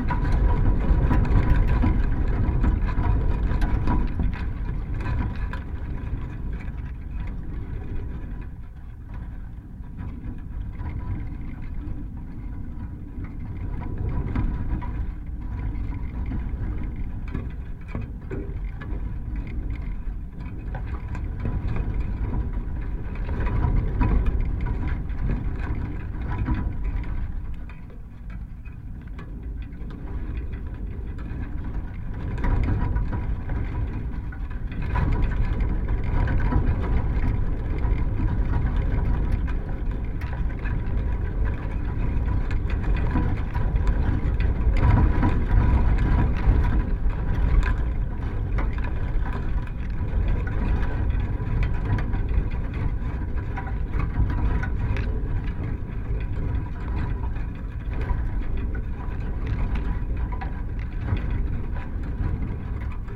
Easton Woods meet Covehithe Beach, Suffolk, UK - fallen tree
tree, slipped off the edge of the cliff above, horizontal on the sand, stripped of its bark by the relentless erosion of the sea, bound by metal chainlink fencing wrenched from its posts on the way down, decorated with dried seaweed, plastic shreds, detritus washed and caught in its tangled roots.
Stereo pair Jez Riley French contact microphones + SoundDevicesMixPre3
England, United Kingdom, 9 May 2022